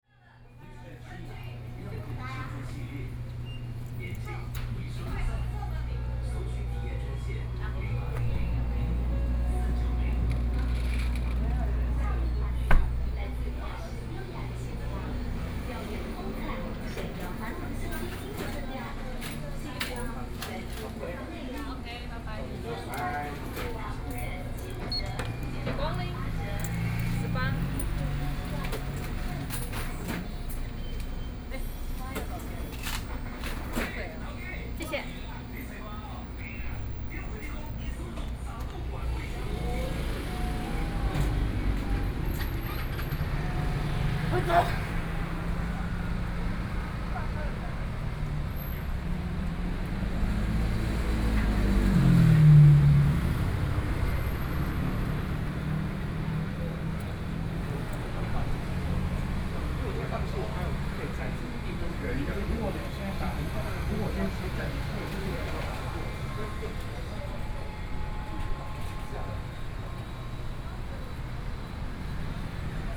Walking on the road, Traffic Sound, Convenience Store